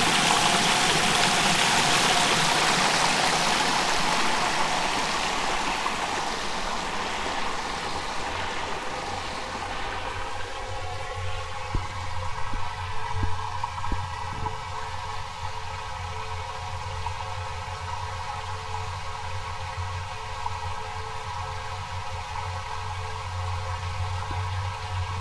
ponds, city park, maribor - candelabrumFlux
circular street lamp trapped between two ponds